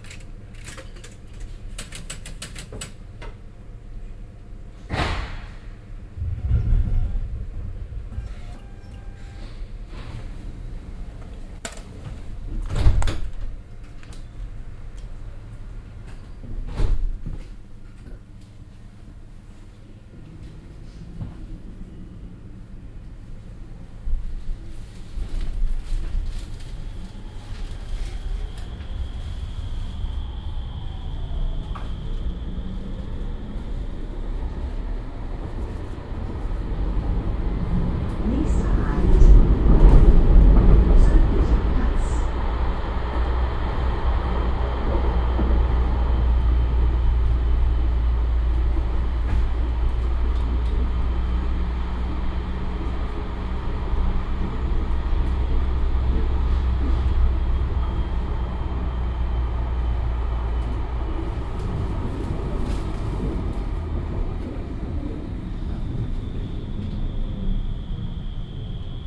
{
  "title": "cologne, strassenbahnfahrt, linie 15, haltestelle zü",
  "date": "2008-06-01 09:26:00",
  "description": "soundmap: köln/ nrw\nbedienen des automaten und strassenbahnfahrt mittags mit der linie 15, nächster halt zülpicher platz\nproject: social ambiences/ listen to the people - in & outdoor nearfield recordings",
  "latitude": "50.93",
  "longitude": "6.94",
  "altitude": "54",
  "timezone": "Europe/Berlin"
}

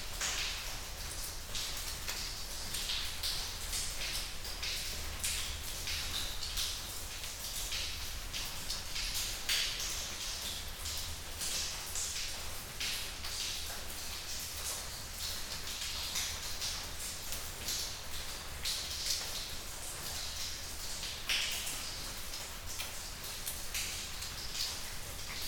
{
  "title": "Unnamed Road, Crickhowell, UK - Inside the cave",
  "date": "2020-08-06 11:27:00",
  "description": "Leaving a Sony PCM-A10 and some LOM MikroUSI's in a cave in the Brecon Beacons.",
  "latitude": "51.83",
  "longitude": "-3.18",
  "altitude": "446",
  "timezone": "Europe/London"
}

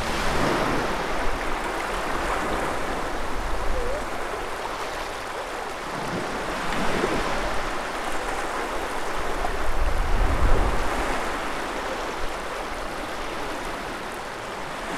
{
  "title": "binz: strand - the city, the country & me: beach",
  "date": "2013-03-04 14:58:00",
  "description": "waves\nthe city, the country & me: march 4, 2013",
  "latitude": "54.40",
  "longitude": "13.62",
  "altitude": "34",
  "timezone": "Europe/Berlin"
}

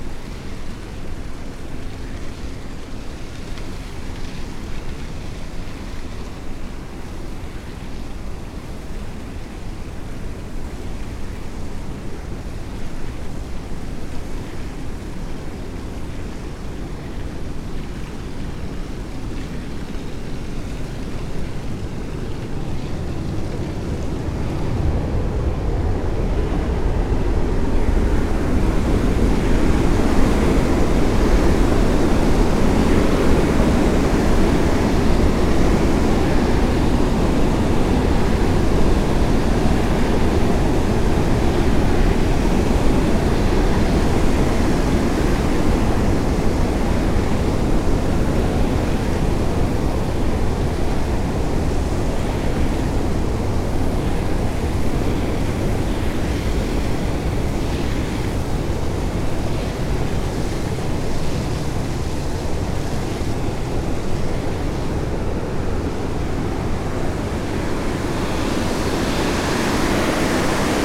{
  "title": "Poses, France - Poses dam",
  "date": "2016-09-20 08:00:00",
  "description": "A walk threw the Poses dam, with powerful Seine river flowing.",
  "latitude": "49.31",
  "longitude": "1.24",
  "altitude": "5",
  "timezone": "Europe/Paris"
}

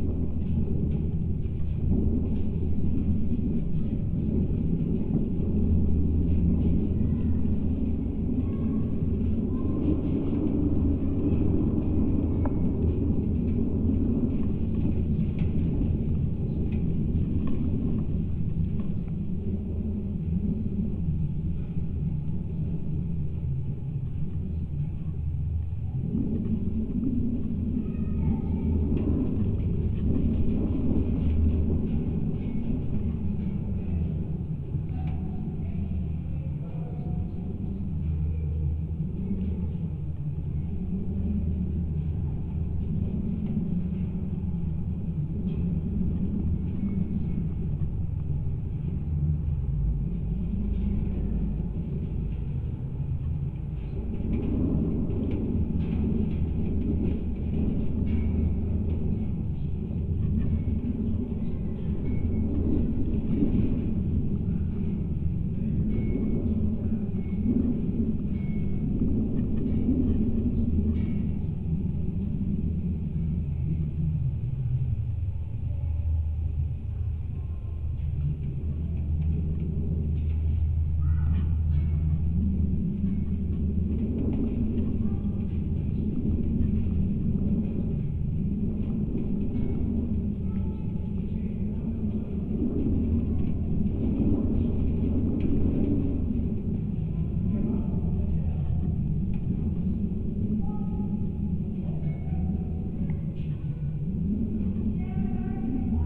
{
  "date": "2020-07-24 16:20:00",
  "description": "Open air sculpture park in Antalge village. There is a large exposition of metal sculptures and instaliations. Now you can visit and listen art. Recorded with contact microphones and geophone.",
  "latitude": "55.48",
  "longitude": "25.49",
  "altitude": "164",
  "timezone": "Europe/Vilnius"
}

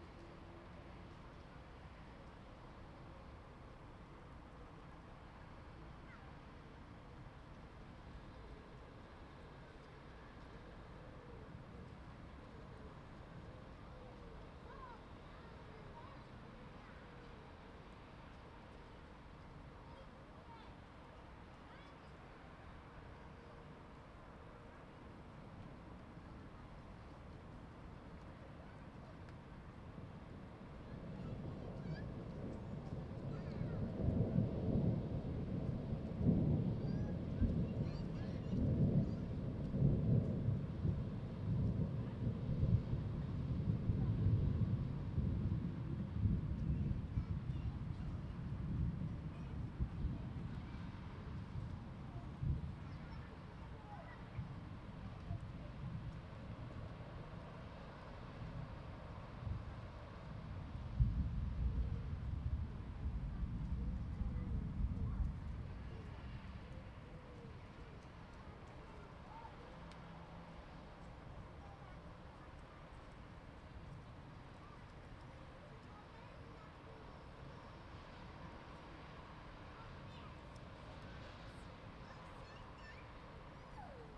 {
  "title": "Andrzeja Kmicica, Wrocław, Poland - Thunderstorm Over Wroclaw",
  "date": "2021-04-19 20:51:00",
  "description": "Thunderstorm Recorded over Wroclaw; recorded using Zoom H3-VR sitting on a window sill, hastily put there before the storm left! A good hour or so of recording, sadly clipped in places due to the volume of the storm. Distant sound of kestrels, and city ambience.",
  "latitude": "51.12",
  "longitude": "16.93",
  "altitude": "114",
  "timezone": "Europe/Warsaw"
}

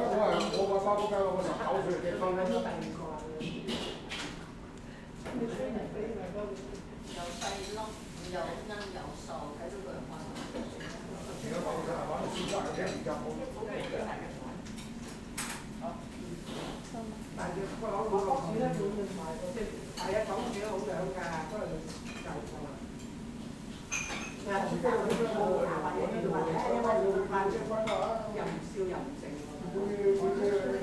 {
  "title": "Hester St, New York, NY, USA - A Restaurant in Chinatown",
  "date": "2019-06-22 18:30:00",
  "description": "Chinese Restaurant Ambience in Chinatown/Little Italy.\nSounds of restaurant crew cutting vegetables and clients chatting.\nZoom H6",
  "latitude": "40.72",
  "longitude": "-74.00",
  "altitude": "19",
  "timezone": "America/New_York"
}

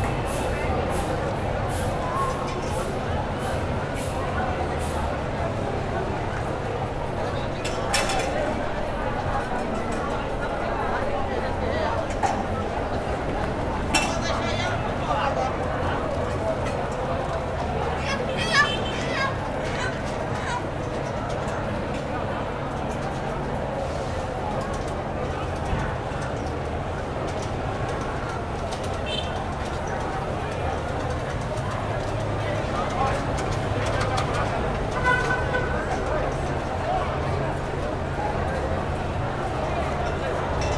{
  "title": "Mercado Municipal, Cachoeira - BA, Brasil - A feira de cima.",
  "date": "2016-05-27 12:20:00",
  "description": "Burburinho da feira, pessoas trabalhando, algo que parece com um pato. Som gravado na janela do segundo andar do mercado municipal.\nPeople working at the free market, something that seems like a duck. Recorded on the second floor of the municipal market.",
  "latitude": "-12.60",
  "longitude": "-38.96",
  "altitude": "9",
  "timezone": "America/Bahia"
}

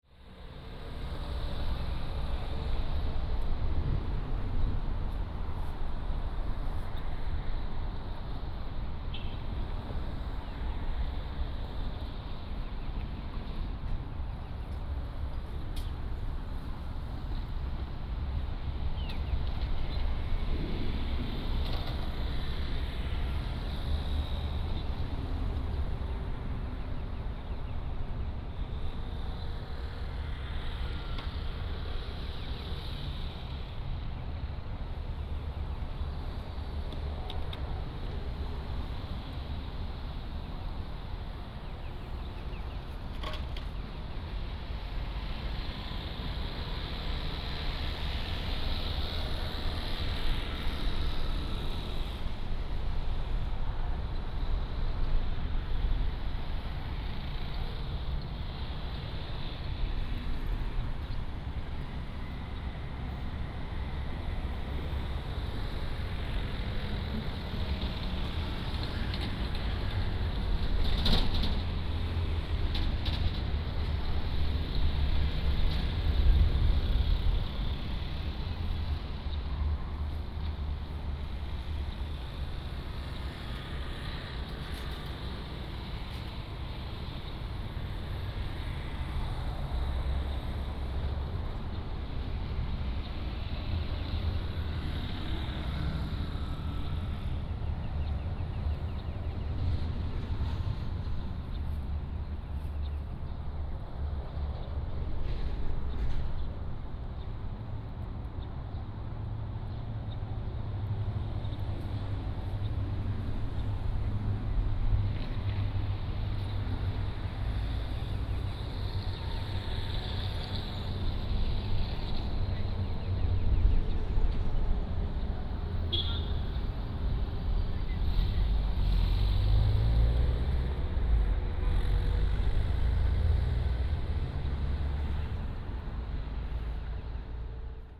{
  "title": "Guangfu Rd., Sanyi Township, Miaoli County - Traffic sound",
  "date": "2017-02-16 11:26:00",
  "description": "At the intersection, Traffic sound",
  "latitude": "24.41",
  "longitude": "120.77",
  "altitude": "278",
  "timezone": "Asia/Taipei"
}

